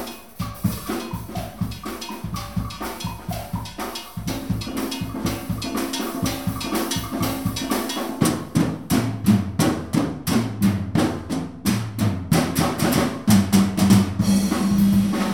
Bumerang band (Zagreb), HR, gig.
You can hear marimbas and various percussion instrument in a medieval solid rock amphitheater with a wooden roof.